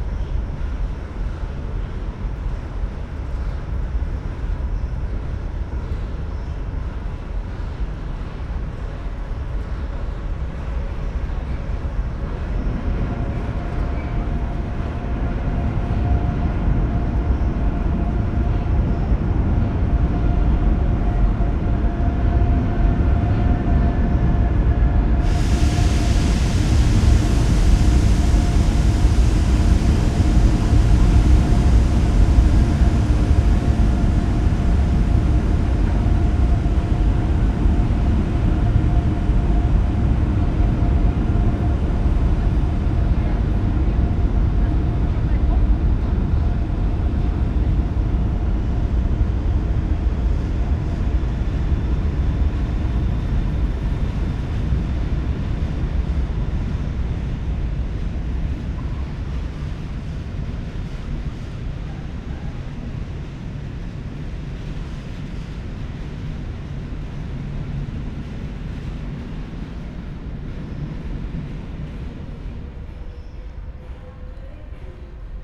Hohenzollernbrücke, Köln Deutz - bridge underpass, trains passing
Köln Deutz, under Hohenzollerbrücke, train bridge, drone of various passing-by trains
(Sony PCM D50, Primo EM172)